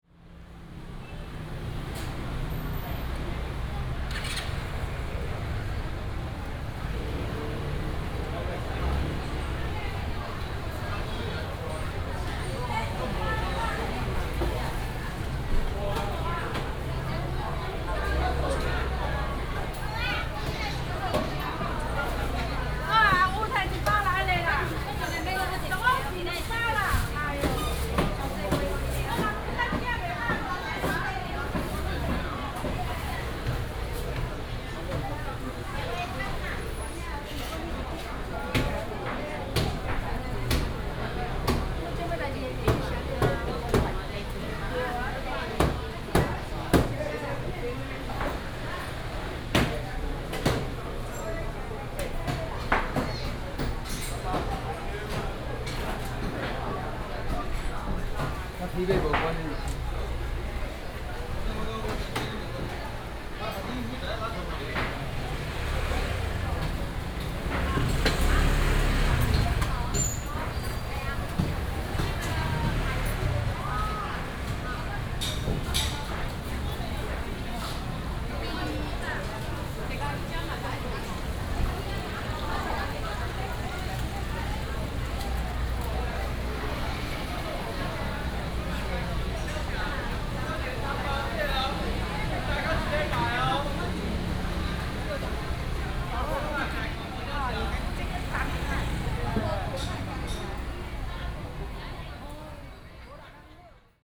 Walking in the market, Inside the market